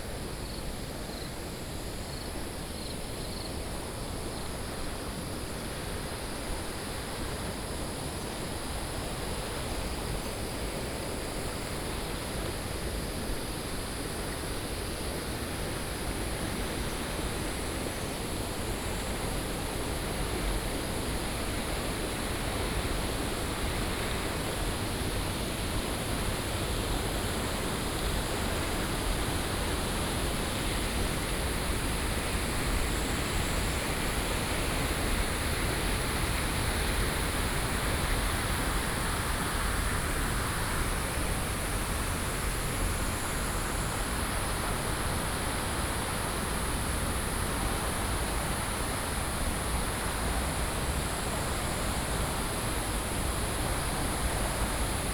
TaoMi River, 埔里鎮 Nantou County - Walking along the stream
Walking along the stream, Insects sounds, The sound of water streams
Nantou County, Puli Township, 桃米巷29號, August 2015